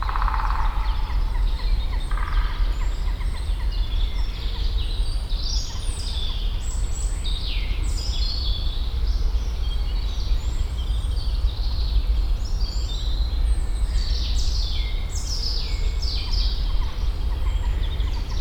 Gebrüder-Funke-Weg, Hamm, Germany - morning spring birds Heessener Wald
hum of the morning rush hour still floating around the forest in seasonal mix with bird song
8 April, 08:32